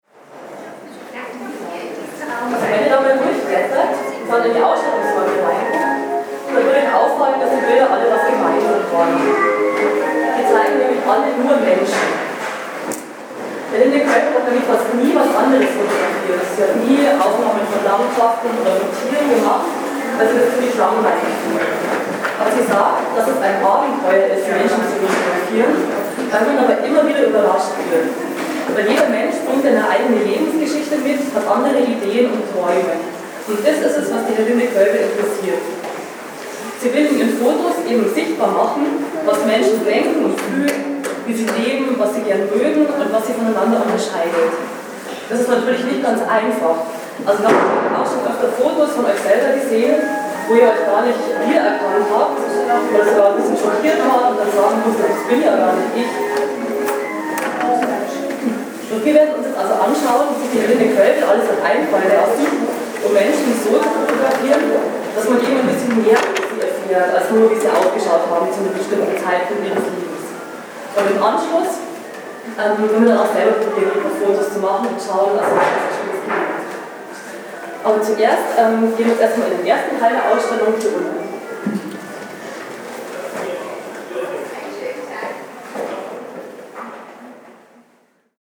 tondatei.de: stadtmuseum lindau mechanische musikinstrumente - tondatei.de: stadtmuseum lindau herlinde koelbl-ausstellung kinderführung
Spuren der Macht, Schlafzimmer in den Metropolen der Welt
Deutschland, European Union